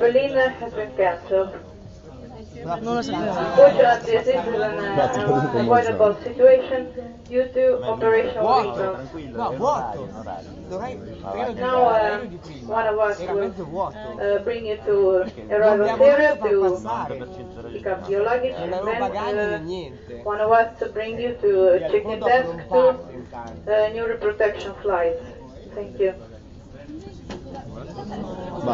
Easyjet Flight 4678 from Milano Malpensa Terminal 2
Along with about 60 other flights that day, also no. 4678 was cancelled, causing uprise among the passengers. mobility is sacred...